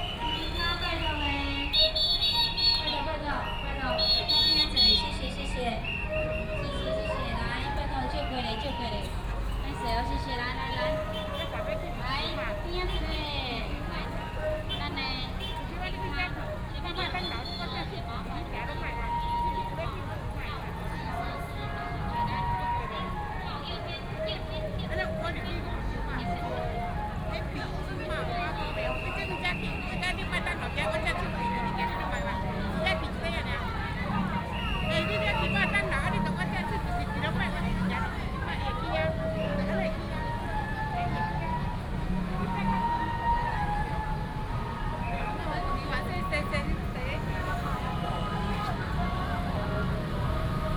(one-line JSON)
{"title": "Dexing Rd., Huwei Township - Mazu Pilgrimage activity", "date": "2017-03-03 16:54:00", "description": "Firecrackers and fireworks, Many people gathered at the intersection, Baishatun Matsu Pilgrimage Procession, Mazu Pilgrimage activity", "latitude": "23.71", "longitude": "120.43", "altitude": "30", "timezone": "Asia/Taipei"}